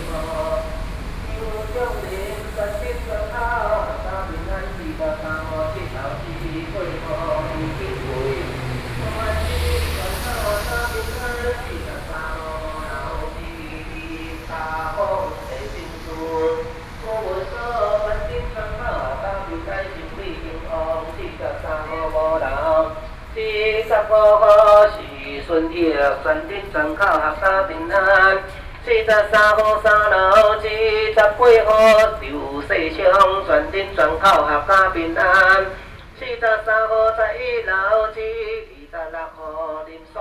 Xinsheng N. Rd, Taipei City - Pudu
Traditional Ceremony, Daoshi, Read singing the name of the household, Sony PCM D50 + Soundman OKM II